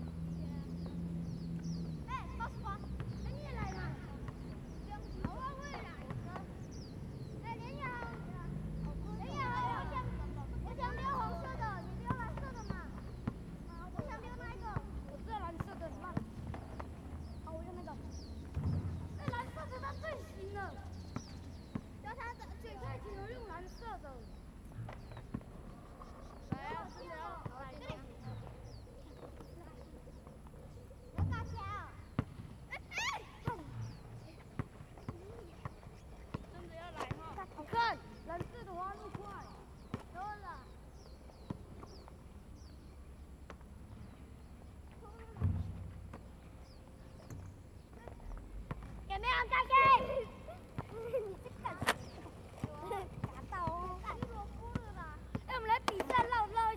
中城國小, Yuli Township - A group of children
A group of children in the playground, Traffic Sound, Birdsong
Zoom H2n MS +XY